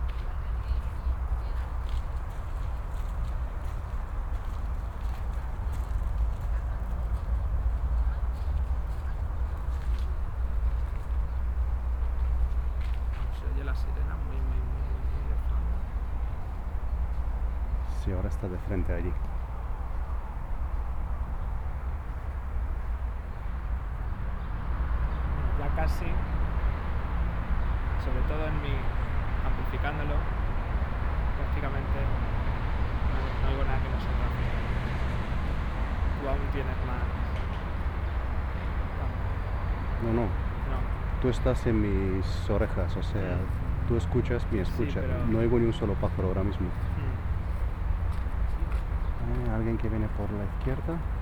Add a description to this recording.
2010-07-18, Botanical Gardens, Madrid, This soundwalk was organized in the following way: one of the participants is, picking up environmental sounds through a pair of OKM Soundman in-ear binaural, microphones, while the other participant is wearing a pair of headphones, monitoring the sound environment picked up by the former. In a sense, one, participant can direct, modify, and affect the acoustic orientation and, perception of the other one. Halfway through the exercise, they swap roles. The place - Madrids Jardín Botánico - was chosen because it is fairly to the, general traffic noise of the city, while still offering the occasional quiet, spot. The soundwalk was designed as an exercise in listening, specifically for the, 1st World Listening Day, 2010-07-18. WLD World Listening Day